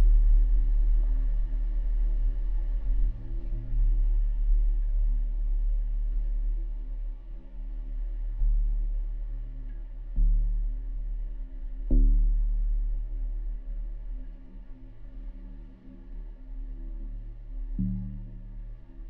SBG, Pantano de Vilatortella
Interior de un tubo sumergido en el pantano.